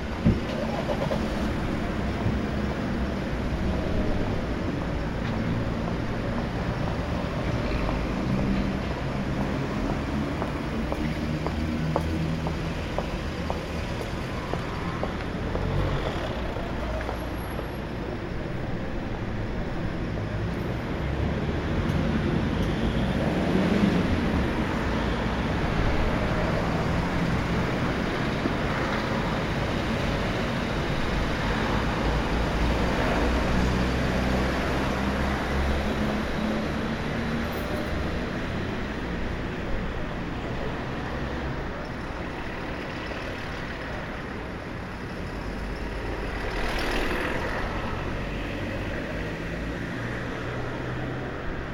Limoges, Place Denis-Dussoubs, Talons aiguille
Dimanche matin dans la circulation...